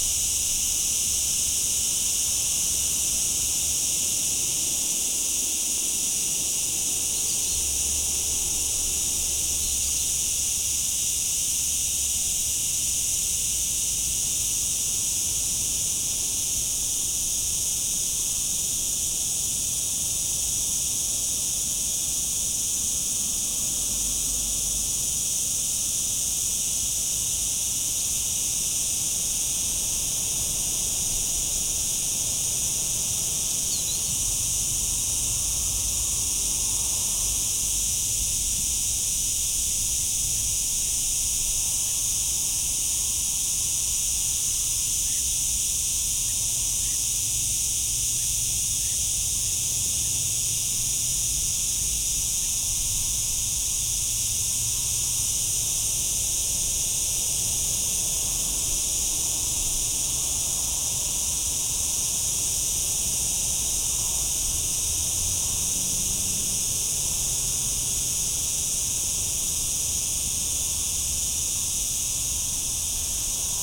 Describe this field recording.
Cicada chorus captured in the Summer of 2018 in Alqueva.